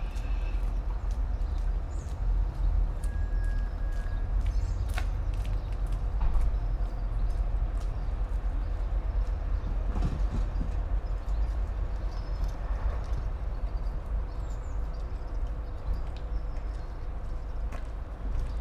all the mornings of the ... - jan 31 2013 thu
Maribor, Slovenia